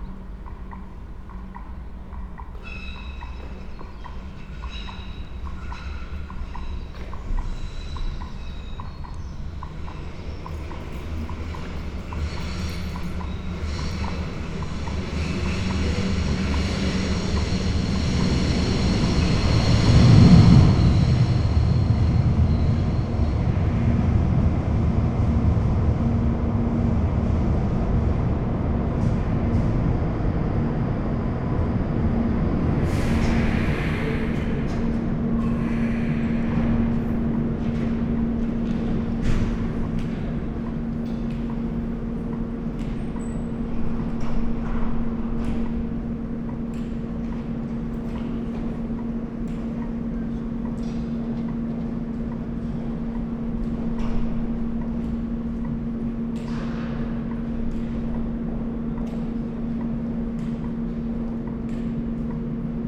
Thielenbruch, Köln, Deutschland - station ambience
terminal stop of tram lines 3 and 18, station hall ambience, a train is arriving
(Sony PCM D50, Primo EM172)